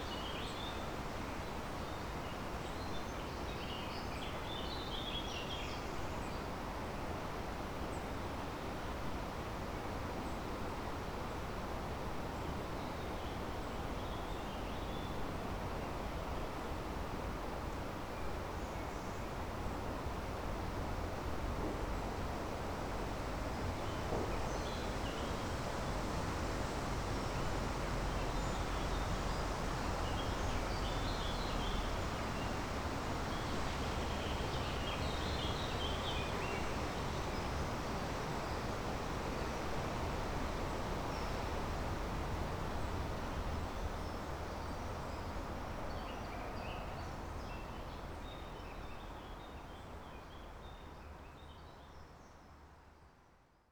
burg/wupper, burger höhe: evangelischer friedhof - the city, the country & me: protestant cemetery
windy afternoon, wind rustling through leaves, traffic noise of L 407
the city, the country & me: june 18, 2011
Solingen, Germany, 18 June, 13:35